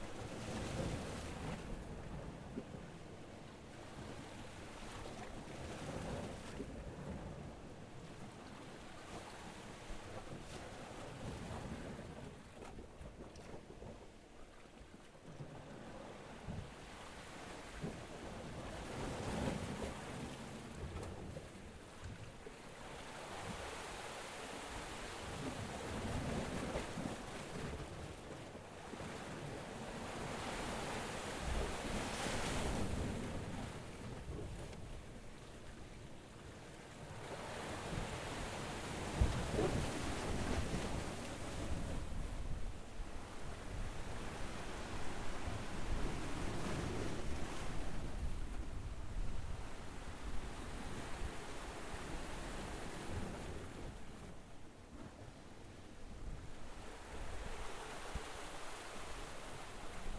This is a bad recording of a magnificent sound that is caused by the tide pushing into an enclosed space under a large rock creating a thunder sound, using a Zoom H4n. Apologies for handling noise/quality hope to return with a better recording sometime as it was a tricky spot!